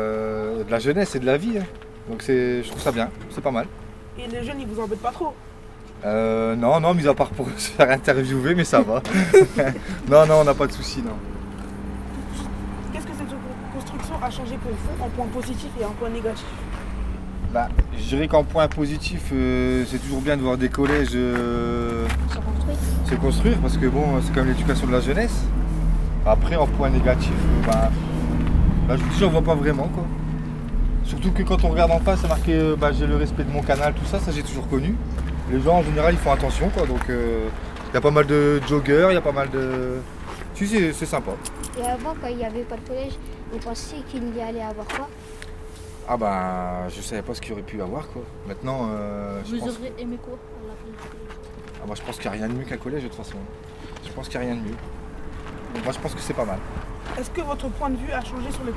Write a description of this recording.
Interview d'Emmanuel qui travaille à la maintenance du pont